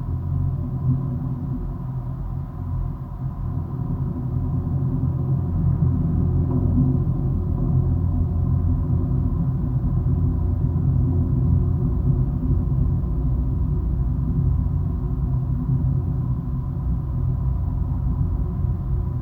Vilnius, Lithuania, lifts base
Liepkalnis' winters skiing base. Geophone on lift's base